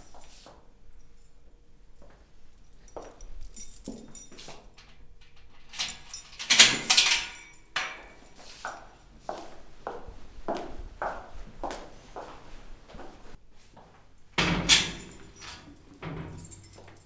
Braunschweig Amtsgericht, Gang im Gefängnistrakt, rec 2004